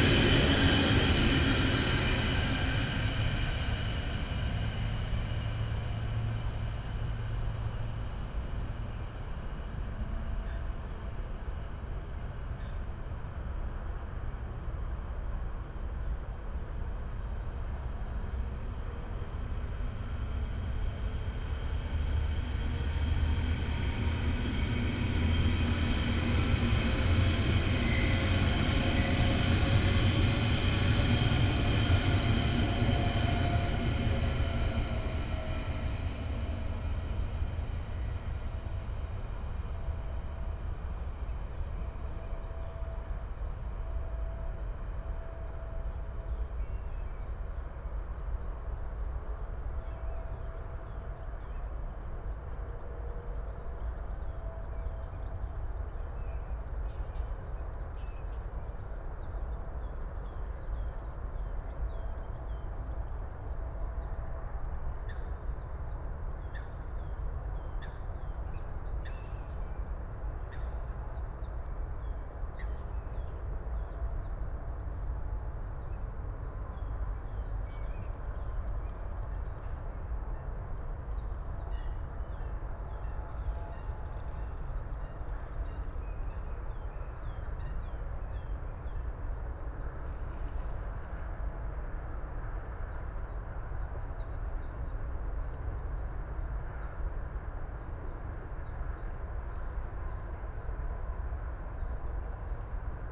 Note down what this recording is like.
Berlin Buch, morning ambience at nature reserve Moorlinse, S-Bahn trains passing by, (Sony PCM D50, DPA4060)